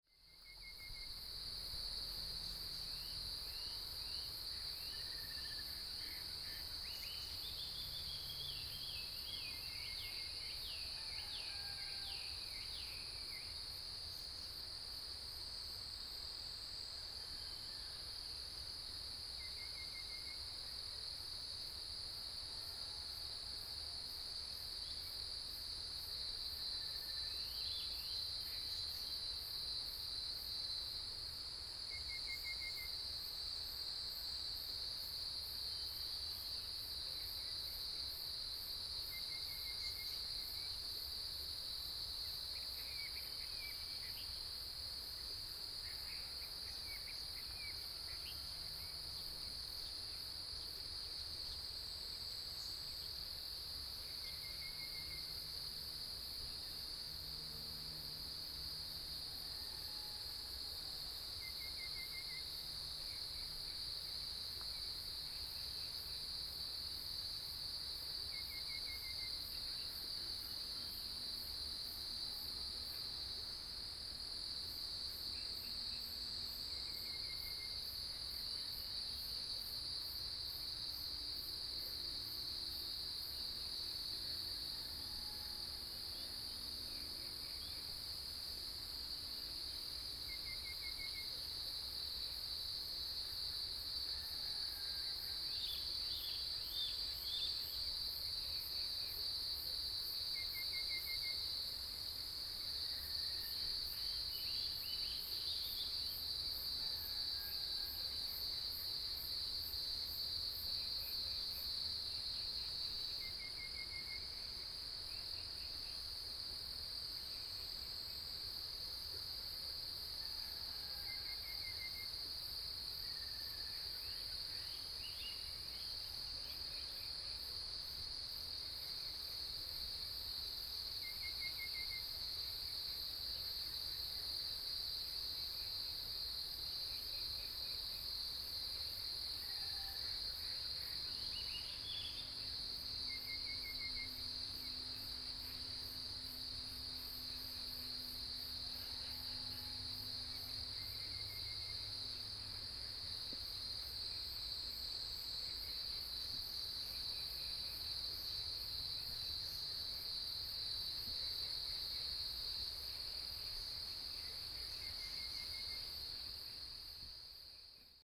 Zhonggua Rd., 桃米里, Puli Township, Taiwan - In the morning
Bird calls, Chicken sounds, Cicadas cry
Nantou County, Taiwan, 3 September 2015